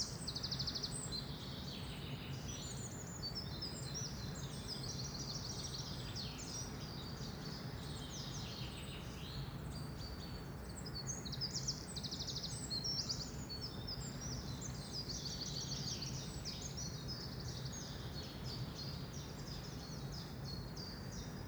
near Allrath, Germany - Springtime birds, faint windgenerators
Birds heard include wren (loudest) chiffchaff and chaffinch.